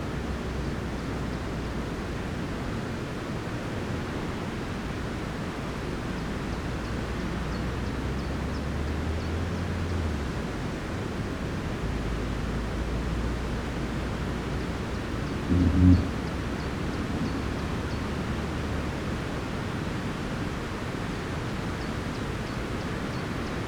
warns, skarl: small forest - the city, the country & me: trees swaying in the wind
stormy day (force 7), trees swaying in the wind, cars driving over cattle grid
the city, the country & me: june 24, 2013
Warns, The Netherlands